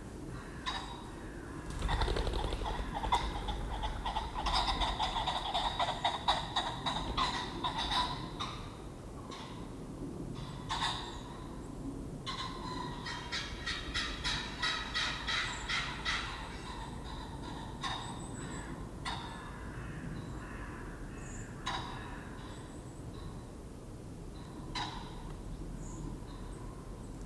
Fen Covert, Suffolk, UK - Evening phesants and buzzard; the woodland darkens
A damp, chilly January evening - pheasants squabble before roost, crows chat to each other and a buzzard mews overhead